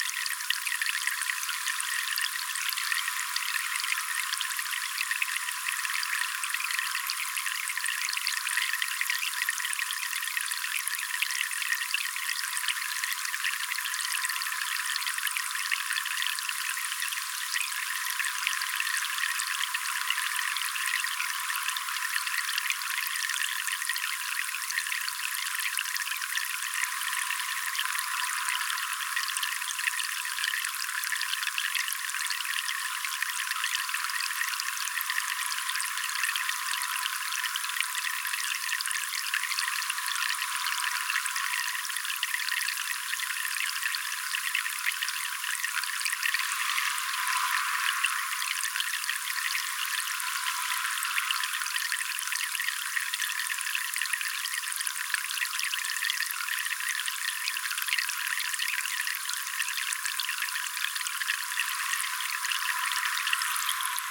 Al Quoz - Dubai - United Arab Emirates - Streaming Tap
Recording of a tap streaming water into a bucket in a small section of greenery.
Recorded using a Zoom H4.
"Tracing The Chora" was a sound walk around the industrial zone of mid-Dubai.
Tracing The Chora
16 January 2016